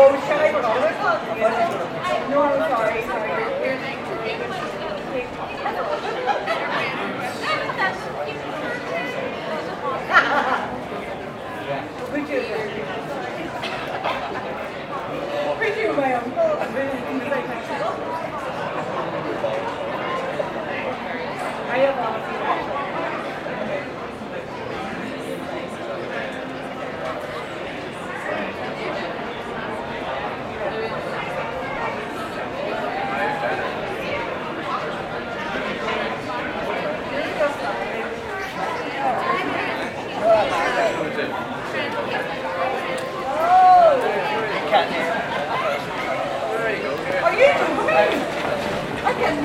Hill St, Belfast, UK - Commercial Court
Recording of outdoor crowd chatter, multi pub ambiences, glassware, cars passing on cobblestone, laughter, radio music playing on speakers, pedestrians walking, a child talking.
Northern Ireland, United Kingdom, 27 March, ~18:00